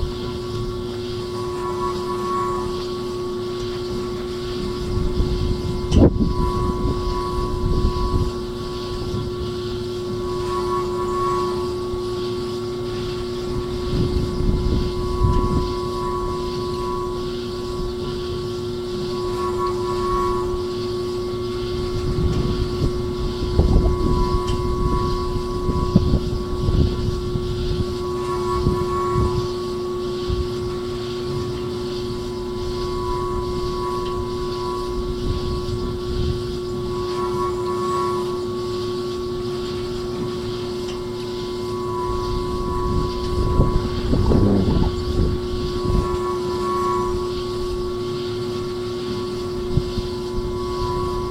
hills north of Lompoc, California, United States - PXP Purisima 71
Oil derrick in operation.